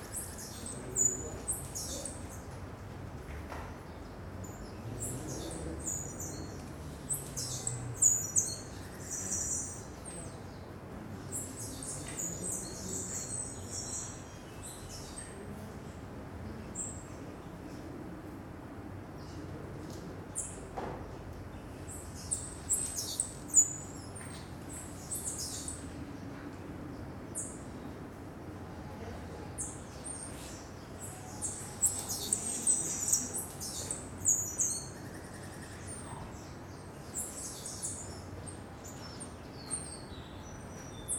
Schonbrunn desert house birds, Vienna
small birds circulate freely in the desert house at Schonbrunn